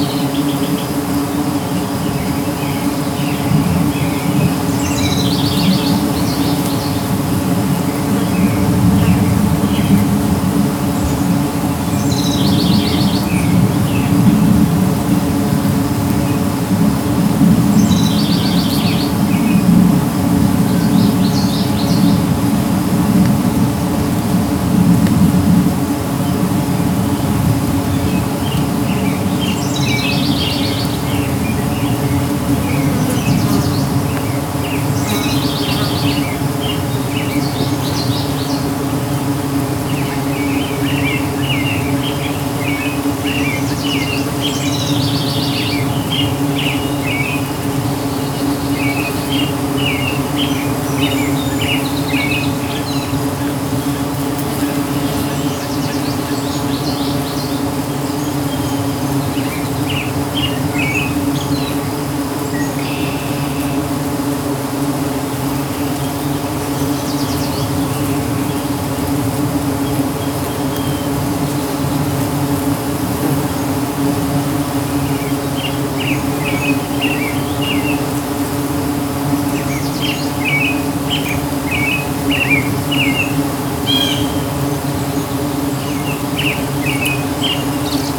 {
  "title": "South Frontenac, ON, Canada - Black Locust tree with bees & birds",
  "date": "2016-06-04 18:00:00",
  "description": "An enormous black locust tree covered in white blossoms, being enjoyed by thousands of bees. Zoom H2n underneath tree, facing upwards. Birds sing. An airplane passes. Screen doors are heard in the distance.",
  "latitude": "44.43",
  "longitude": "-76.43",
  "altitude": "134",
  "timezone": "America/Toronto"
}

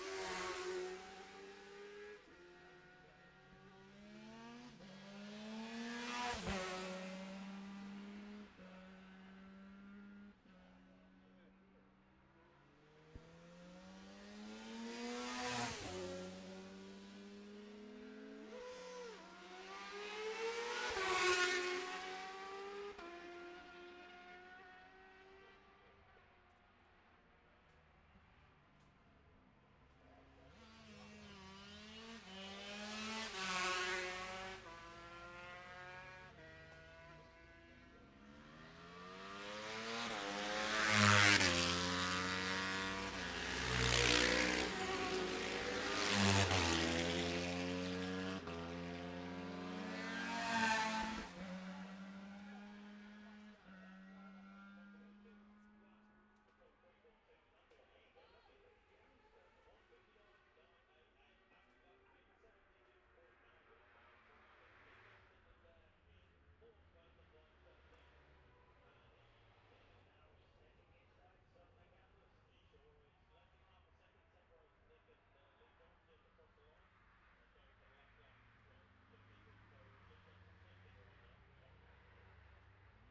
{
  "title": "Jacksons Ln, Scarborough, UK - olivers mount road racing ... 2021 ...",
  "date": "2021-05-22 15:18:00",
  "description": "bob smith spring cup ... ultra-lightweights race 1 ... dpa 4060s to MixPre3 ... mics clipped to twigs in a tree some 5m from track ...",
  "latitude": "54.27",
  "longitude": "-0.41",
  "altitude": "144",
  "timezone": "Europe/London"
}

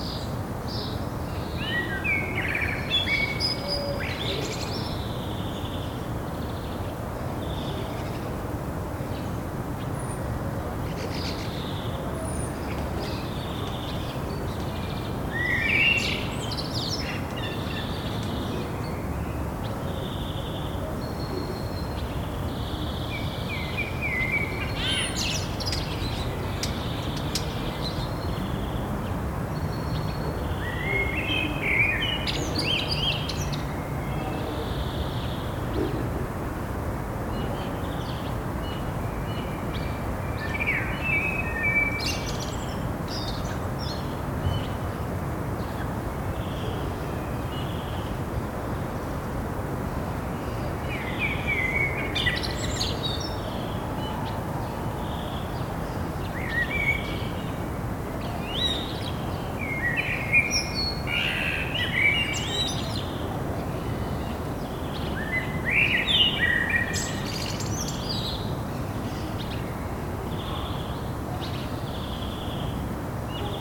{
  "title": "Av. Camille Flammarion, Toulouse, France - Jolimont 02",
  "date": "2022-04-10 17:10:00",
  "description": "ambience Parc 02\nCaptation ZoomH4n",
  "latitude": "43.61",
  "longitude": "1.46",
  "altitude": "195",
  "timezone": "Europe/Paris"
}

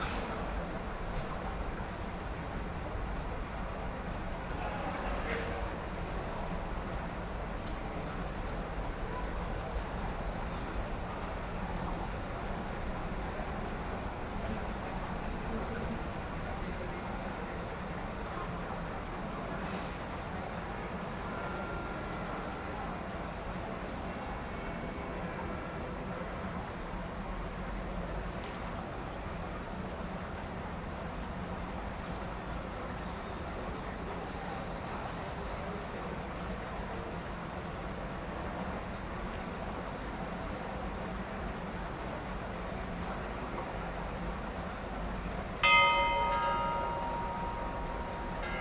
{
  "title": "Aarau, Schweiz - Vor dem Rathaus",
  "date": "2016-06-28 18:26:00",
  "description": "Voices, water, a sneeze, a bell - the inner city of Aarau",
  "latitude": "47.39",
  "longitude": "8.04",
  "altitude": "385",
  "timezone": "Europe/Zurich"
}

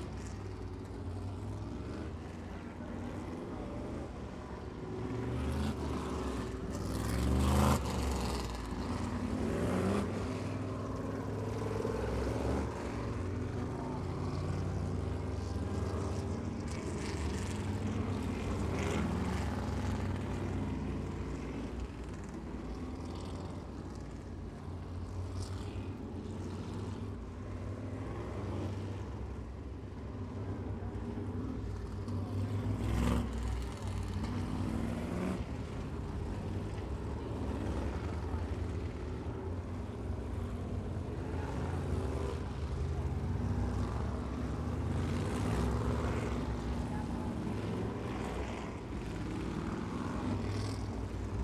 {
  "title": "Hudson Speedway - Supermodified Practice",
  "date": "2022-05-22 12:29:00",
  "description": "Practice for the SMAC 350 Supermodifieds at Hudson Speedway",
  "latitude": "42.81",
  "longitude": "-71.41",
  "altitude": "67",
  "timezone": "America/New_York"
}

